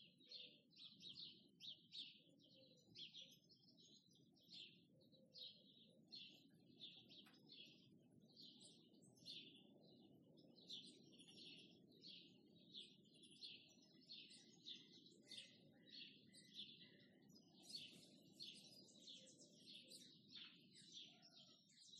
Suchohrdly u Miroslavi, Suchohrdly u Miroslavi, Česko - Garden sounds
It was quite windy, but given the fact I found a place to hide, it is not really recognizable on the recording. You can mostly hear birds chirping and then in the background a car passing by.